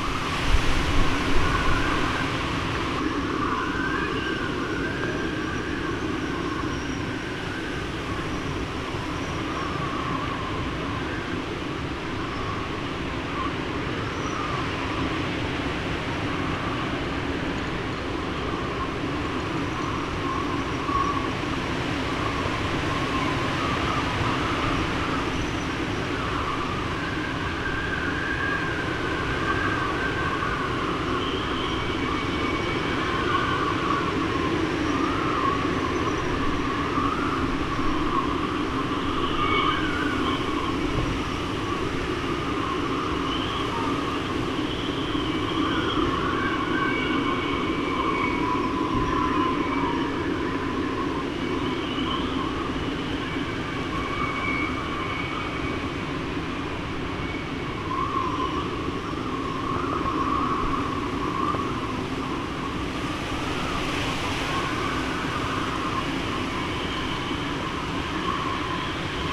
Hafen von Kloster, Insel Hiddensee, Germany - Windspiel am Hafen
Wind in den Masten und Rahen der Boote und in den nahegelegenen Büschen. Mono-Aufnahme mit Windschutz.